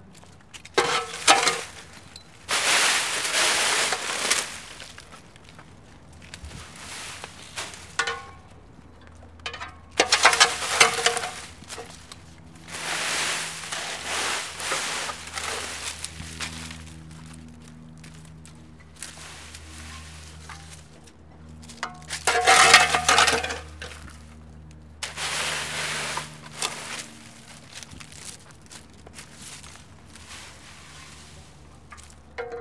Collège Pons, Perpignan, France - Ramassage des feuilles mortes
L'employé ramasse les feuilles mortes dans la cour à l'aide d'une pelle et d'un râteau et les charge dans son camion.